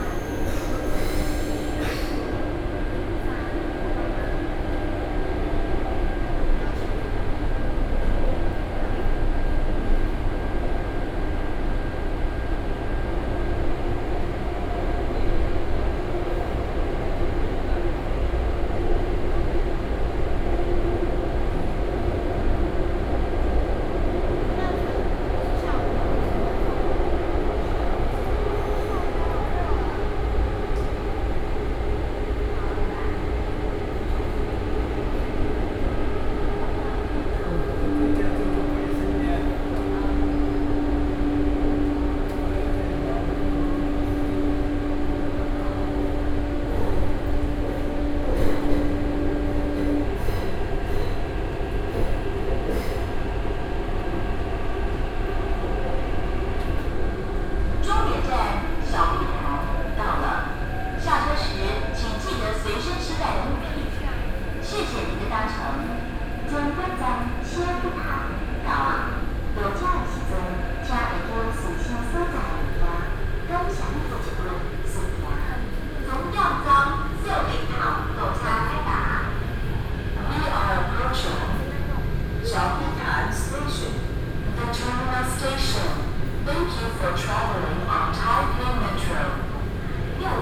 Xiaobitan Branch Line (Taipei Metro), Zoom H4n+ Soundman OKM II